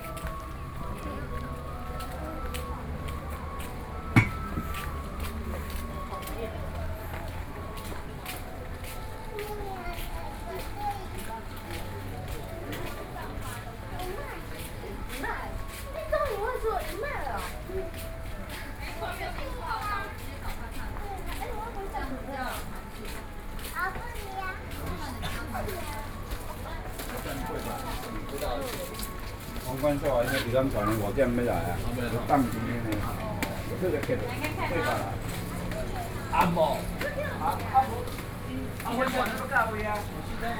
Shífēn St, New Taipei City - soundwalk